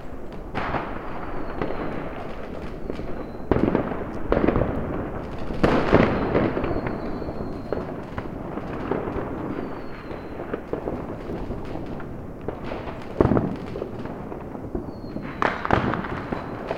Bulharská street, Brno town, Czech Republic - New Years fireworks in Brno
Binaural recording of a festive event on a street. Soundman OKM II Classic microphones.
Listen using decent headphones.
Jihovýchod, Česko, 1 January 2014